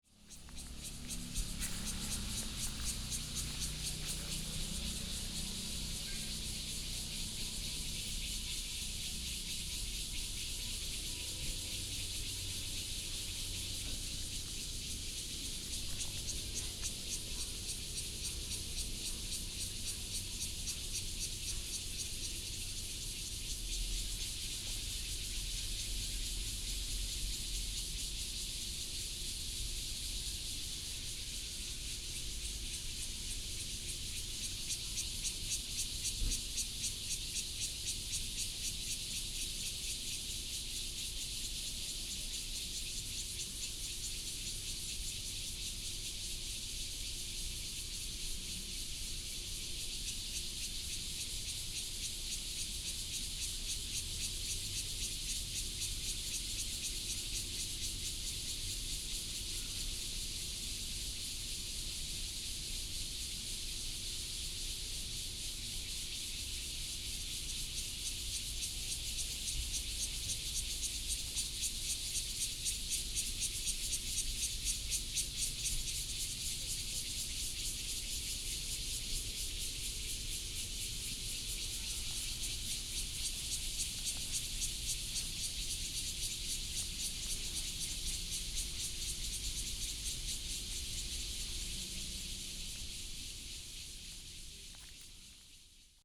新福里, Guanshan Township - Cicadas sound
Cicadas sound, Traffic Sound, Small towns
Taitung County, Guanshan Township, 7 September 2014, 10:41